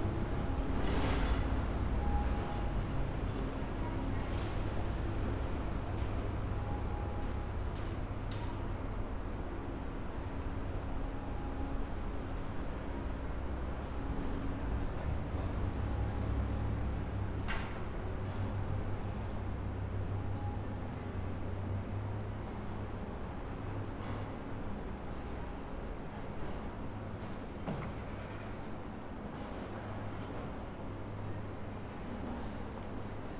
although the site was active the area was rather quiet. not too much noise at all. it's an area with many small restaurants, people come here after work to relax in bars. seems nobody is bothered by the working machines.
泉北郡 (Senboku District), 近畿 (Kinki Region), 日本 (Japan), 30 March, ~16:00